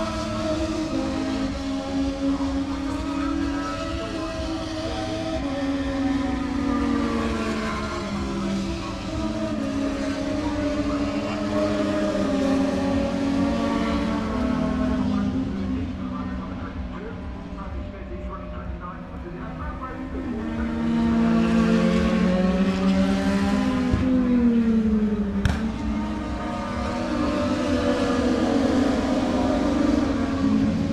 Silverstone Circuit, Towcester, UK - british motorcycle grand prix 2019 ... moto two ... fp1 contd ...
british motorcycle grand prix 2019 ... moto two ... fp1 contd ... some commentary ... lavalier mics clipped to bag ... background noise... the disco from the entertainment area ...
East Midlands, England, UK